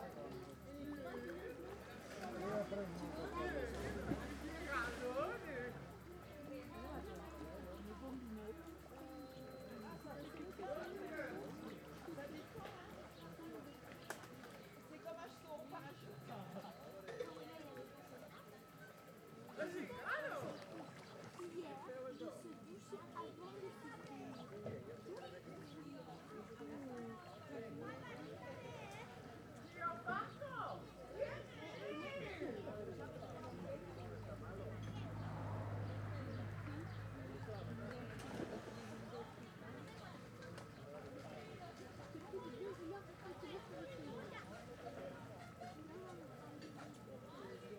Carrer Sta. Margalida, Tárbena, Alicante, Espagne - Tàrbena - Espagne - Piscine Municipale Ambiance
Tàrbena - Province d'allicante - Espagne
Piscine Municipale
Ambiance
ZOO F3 + AKG C451B